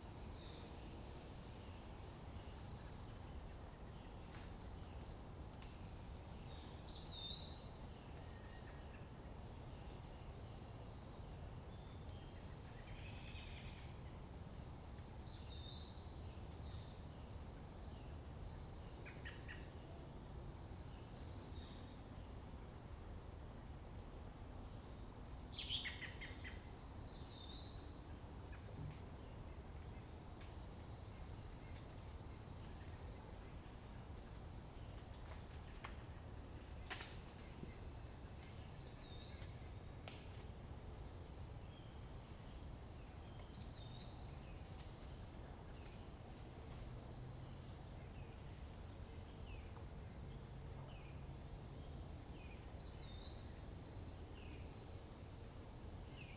Owl Creek Trail, Queeny Park, St. Louis, Missouri, USA - Under the Pawpaw
Evening recording in a pawpaw patch adjacent to the Owl Creek Trail
Missouri, United States, 16 August 2022, 19:21